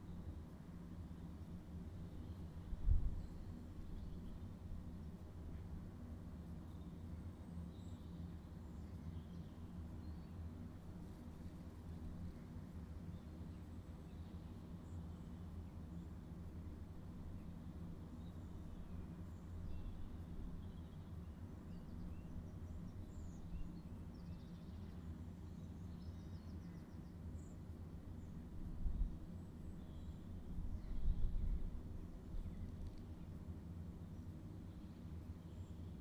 Bird Table, Cloughinnea Road, Forkhill, South Armagh. World Listening Day. WLD
Newry and Mourne, UK, 9 March